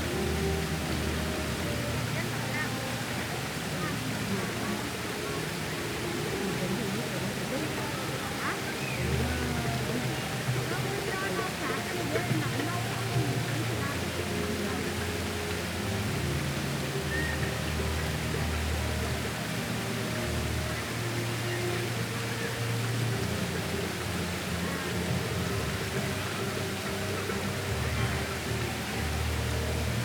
Friendship of the Peoples' fountain, Alexanderpl., Berlin, Germany - 'Friendship of the Peoples' fountain
During all the building work of 2021 the 'Friendship of the Peoples' fountain remains Alexanderplatz's focal point. Tourists from all nations still group here, sitting around the edge, chatting, looking at maps, checking phones, deciding what next. The fountain water fizzes. Several musicians play. Rock ballads, classical music, Arabic drumming. It is a warm day and the reverberant acoustics are soupy, made less clear by hums, whines and bangs from the building site. An older man, slightly drunk, very briefly strokes the fake fur of my microphone wind shield, and walks on. Friendship?!
Deutschland, 9 September 2021